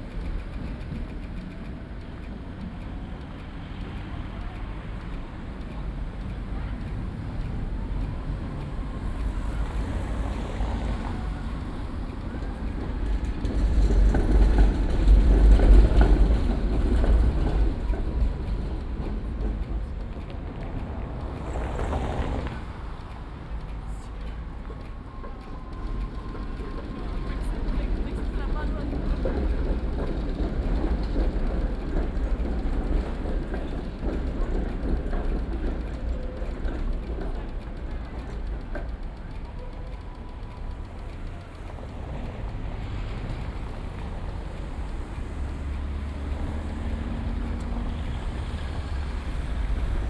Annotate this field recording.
On a busy junction, with two nicely out of sync ticker signals to help the visually impaired know when to cross, one on the left one on the right. cars and trams passing. Soundman binaural mics / Tascam DR40.